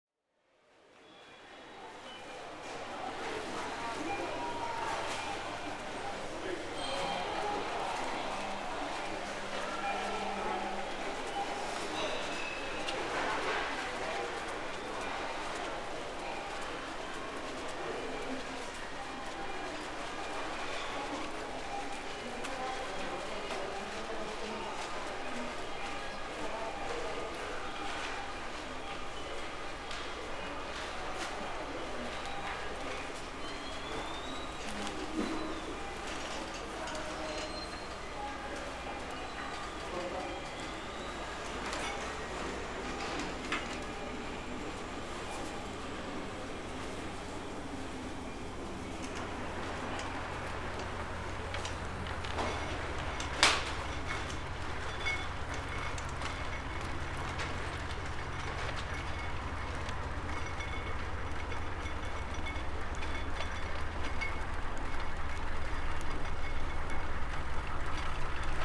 Prisma supermarket, Rovaniemi, Finland - From the counters to the car
Short trip from the counters to the car. Zoom H5 with default X/Y module inside the shopping cart.
Lappi, Manner-Suomi, Suomi, 19 June 2020, ~12:00